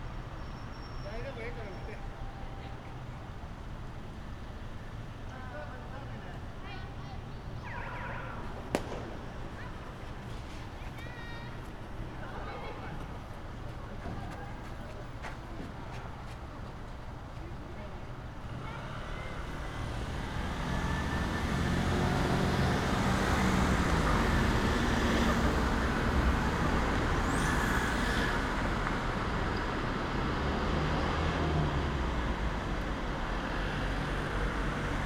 Osaka, near Tennōji Elementary School - basball practice
baseball practice game and harsh pulse of a five line street
近畿 (Kinki Region), 日本 (Japan), 31 March 2013, 10:53